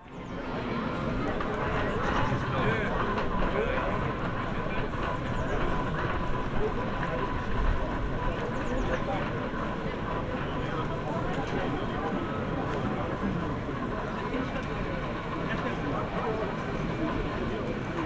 dahlwitz-hoppegarten: galopprennbahn - the city, the country & me: racecourse, courtyard
courtyard between the races, jazz band
the city, the country & me: may 5, 2013